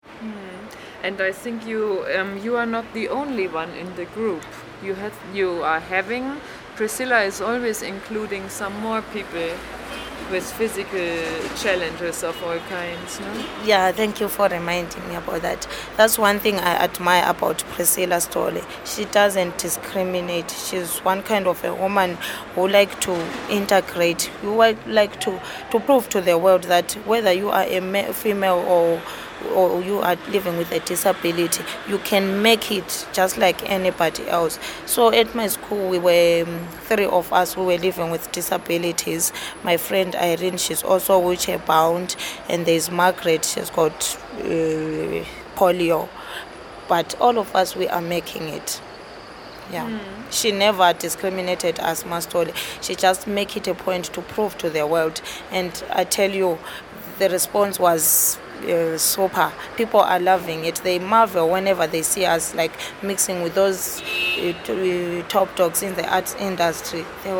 Main Street Office Block, Bulawayo, Zimbabwe - Hope above Bulawayo
Hope Ranganayi tells of her stories as a woman filmmaker who is wheelchair bound. The conversation took place on a balcony of an office block above Bulawayo where Hope is working as a graphic designer.